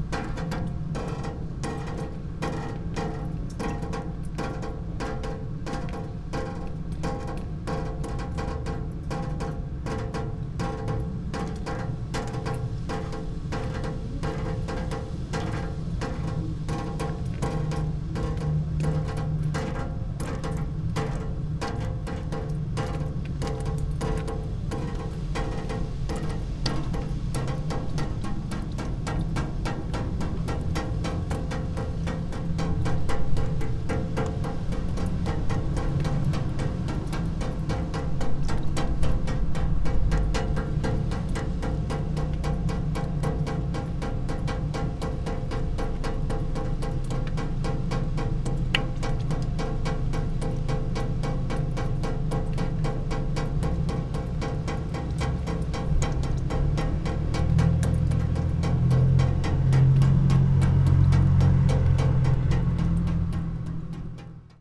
Slomškov trg, Maribor - fountain, wind on water
minimalistic fountain with one water stream
Maribor, Slovenia, 8 October 2014